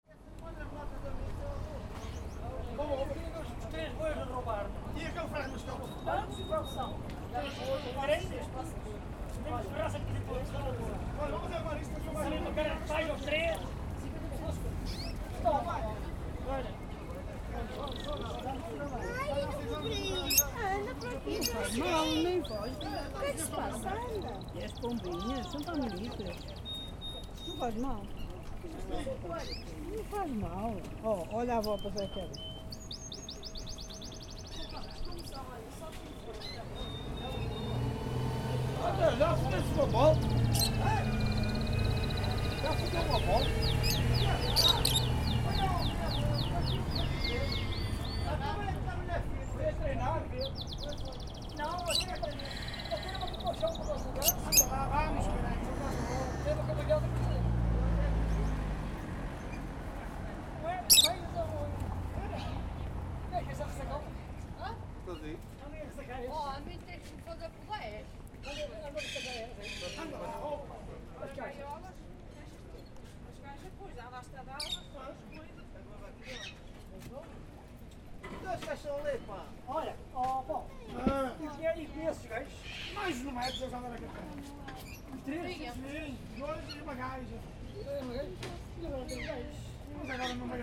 The Birds Fair in Porto.
The last vendors are packing bird cages into a truck.
Zoom H4n
Porto, Portugal, 14 December